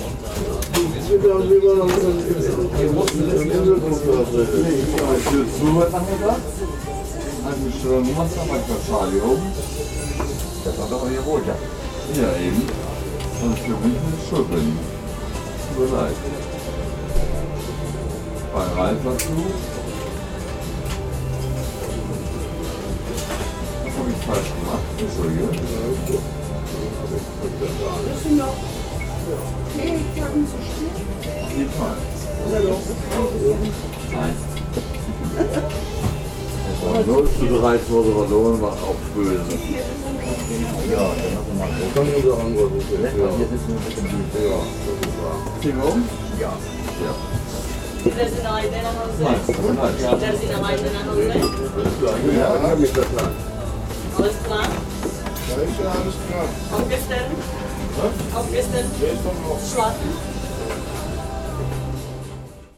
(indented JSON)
{
  "title": "Helgoland, Deutschland - felsenkrug",
  "date": "2012-12-09 17:09:00",
  "description": "felsenkrug, bremer str. 235, 27498 helgoland",
  "latitude": "54.18",
  "longitude": "7.89",
  "altitude": "14",
  "timezone": "Europe/Berlin"
}